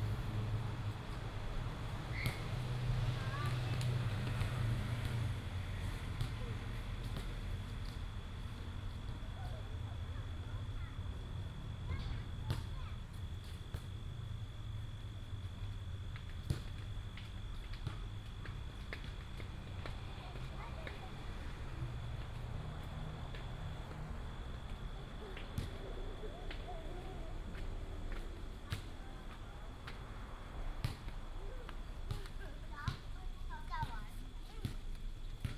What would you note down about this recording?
Abandoned railway, Currently converted into bike lanes and parks, traffic sound, Childrens sound, Basketball court, Buzz sound, Binaural recordings, Sony PCM D100+ Soundman OKM II